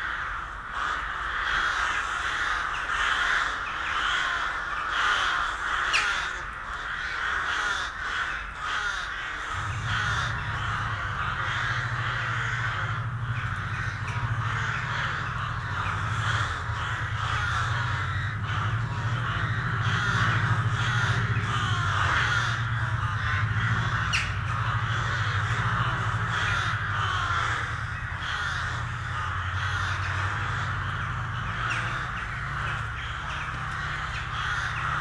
Utena, Lithuania, crows colony
Recorded with ambisonic (ambeo) microphones, so the best listening results will be with headphones.
Utenos apskritis, Lietuva, 24 March 2021, ~12:00